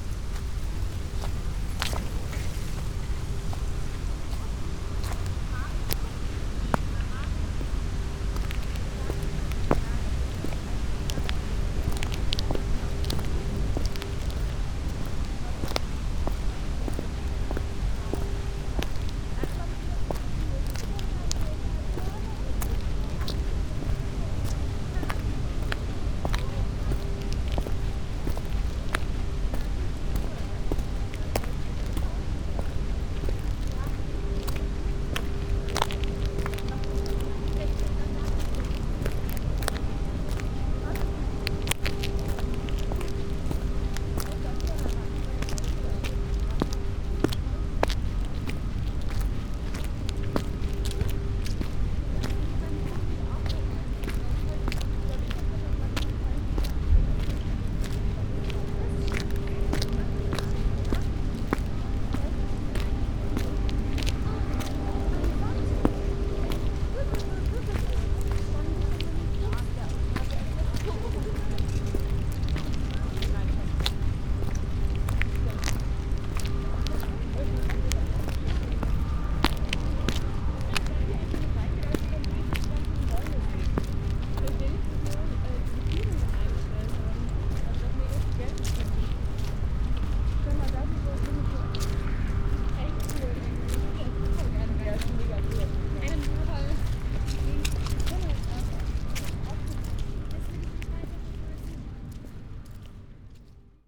Plänterwald, Berlin, Germany - lapping waves, concrete wall, wind, walking
river Spree
Sonopoetic paths Berlin
6 September, 16:55